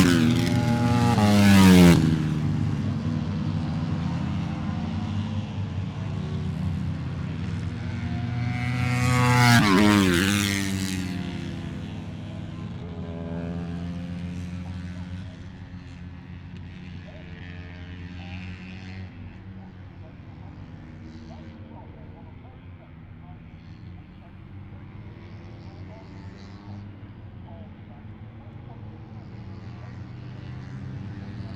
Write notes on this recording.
moto one ... free practice one ... open lavalier mics on T bar and mini tripod ...